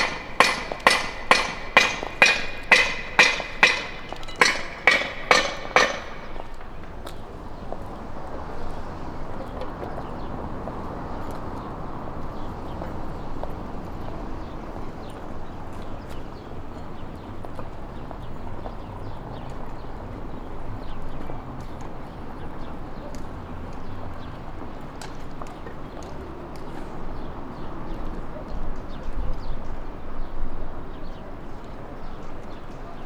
Sé e São Pedro, Evora, Portugal - Templo de Diana
Acoustic surroundings of Templo Diana, June 2006, AKG MS setup, Canford preamp, microtrack 2496
13 June, 14:51